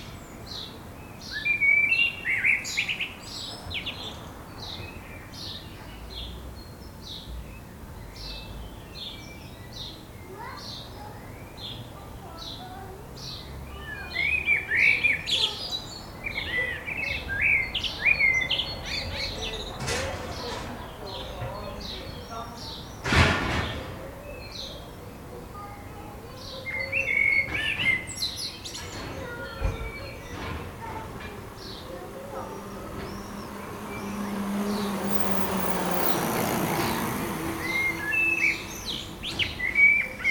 {
  "title": "Chem. Maurice, Toulouse, France - Chemin Maurice",
  "date": "2022-04-28 12:30:00",
  "description": "quiet street in a pleasant residential area.\nCaptation : ZOOMh4n",
  "latitude": "43.62",
  "longitude": "1.46",
  "altitude": "161",
  "timezone": "Europe/Paris"
}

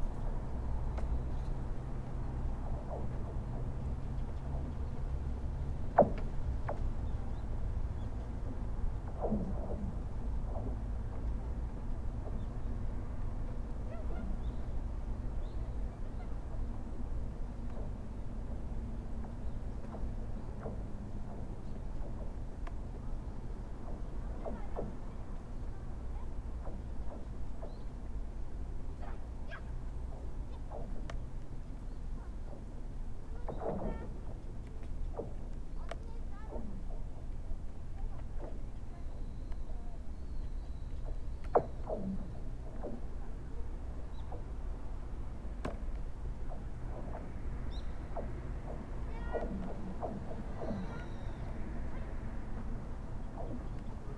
{
  "title": "River ice",
  "date": "2021-01-01 11:00:00",
  "description": "Ice covers the Seoksa river bank to bank at the river-mouth and starts to grow out into Chuncheon lake.",
  "latitude": "37.87",
  "longitude": "127.71",
  "altitude": "81",
  "timezone": "Asia/Seoul"
}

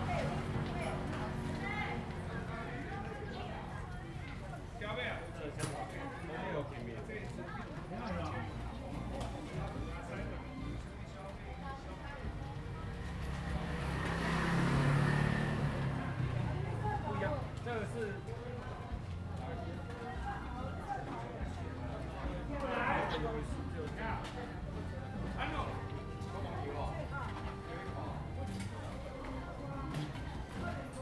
{
  "title": "烏鬼洞風景區, Hsiao Liouciou Island - Opposite the restaurant",
  "date": "2014-11-01 13:28:00",
  "description": "In the side of the road, Tourists, Restaurant, Traffic Sound\nZoom H2n MS +XY",
  "latitude": "22.33",
  "longitude": "120.36",
  "altitude": "30",
  "timezone": "Asia/Taipei"
}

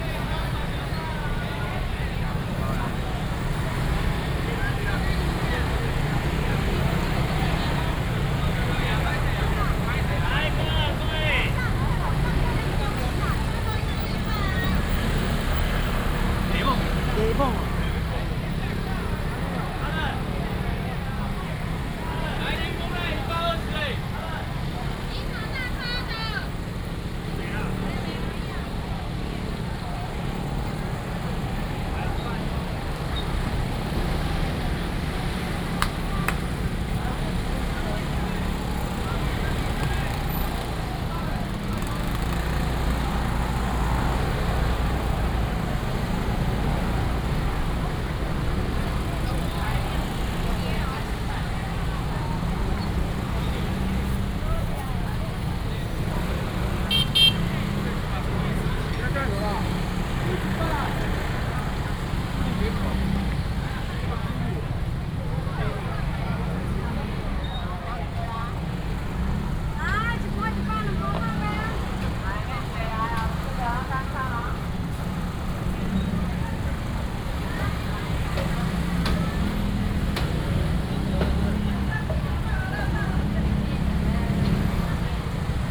{
  "title": "Sec., Jiangning Rd., 板橋區, New Taipei City - Walking in the traditional market",
  "date": "2015-07-29 17:45:00",
  "description": "Walking through the traditional market, Cries of street vendors, A large of motorcycles and people are moving in the same street",
  "latitude": "25.03",
  "longitude": "121.47",
  "altitude": "15",
  "timezone": "Asia/Taipei"
}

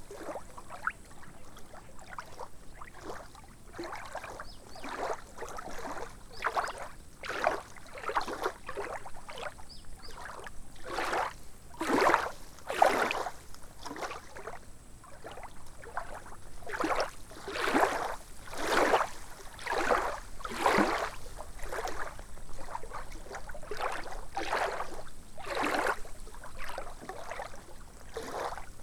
{
  "title": "Lithuania, Tauragnai, at lake Tauragnas",
  "date": "2012-08-31 15:50:00",
  "latitude": "55.45",
  "longitude": "25.87",
  "altitude": "168",
  "timezone": "Europe/Vilnius"
}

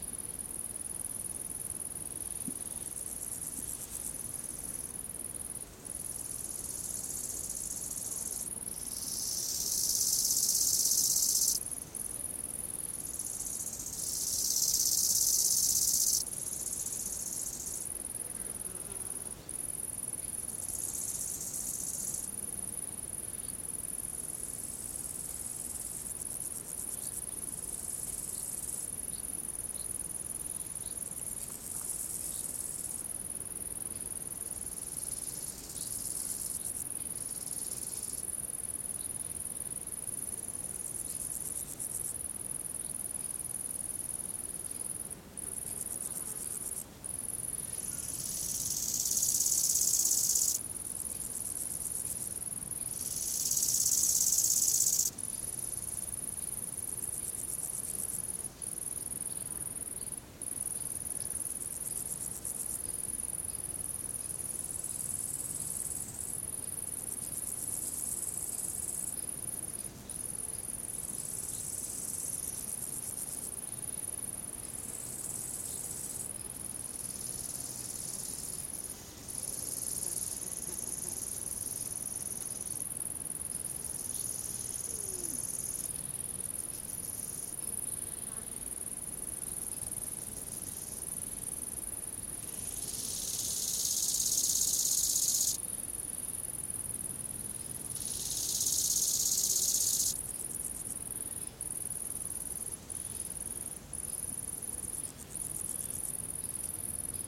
{
  "title": "Erlangen, Deutschland - grasshoppers",
  "date": "2012-08-31 16:13:00",
  "description": "sunny afternoon, grasshoppers - olympus ls-5",
  "latitude": "49.60",
  "longitude": "10.95",
  "altitude": "294",
  "timezone": "Europe/Berlin"
}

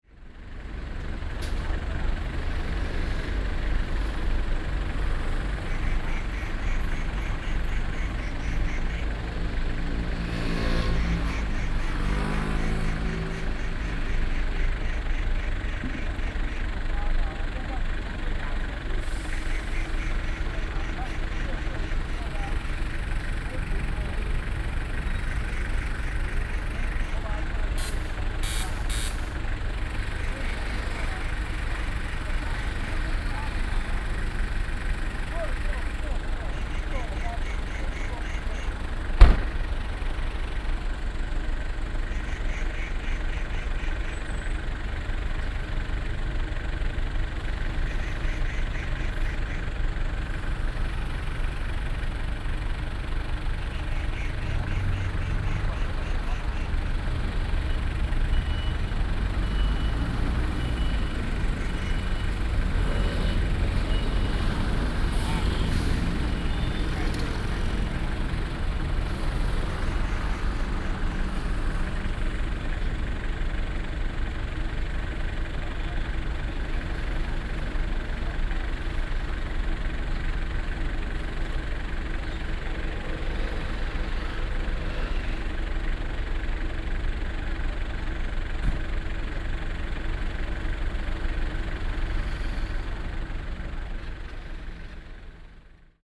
{
  "title": "建功路, Hsinchu City - Bird and traffic sound",
  "date": "2017-09-27 16:03:00",
  "description": "Bird call, traffic sound, Binaural recordings, Sony PCM D100+ Soundman OKM II",
  "latitude": "24.80",
  "longitude": "121.00",
  "altitude": "54",
  "timezone": "Asia/Taipei"
}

